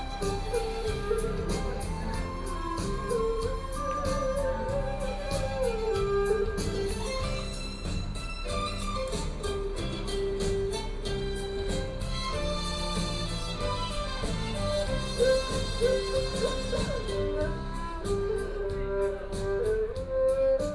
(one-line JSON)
{"title": "Guanxi Service Area, Hsinchu County - Buskers", "date": "2013-12-22 09:49:00", "description": "Near the entrance of the store at the rest area, A visually impaired person is using Erhu music, And from the sound of the crowd, Traffic Sound, Binaural recording, Zoom H6+ Soundman OKM II", "latitude": "24.80", "longitude": "121.19", "altitude": "240", "timezone": "Asia/Taipei"}